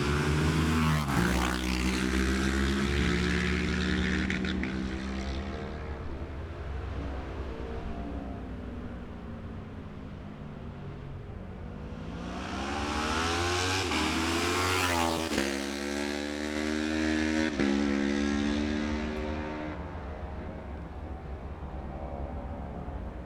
Jacksons Ln, Scarborough, UK - Gold Cup 2020 ...
Gold Cup 2020 ... Twins practice ... dpas sandwich box to MixPre3 ...